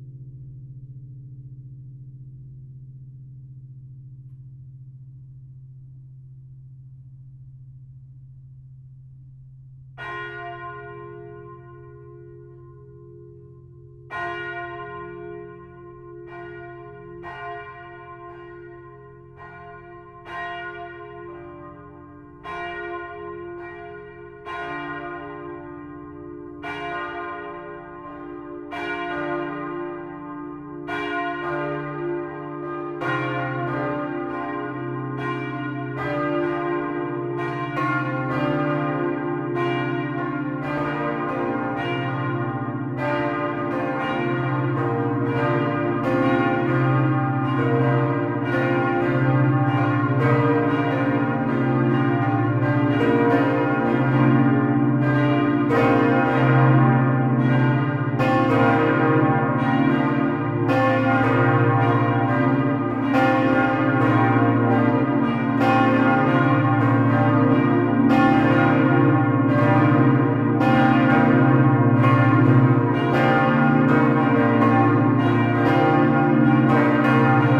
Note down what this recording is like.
On the national day fest, ringing of all the small bells. The big bell Salvator, located on the north tower, doesn't ring at this moment. Recorded inside the tower. Thanks to Thibaut Boudart for precious help to record these bells. ~~~Before the bells ringing, there's the automatic hour chime.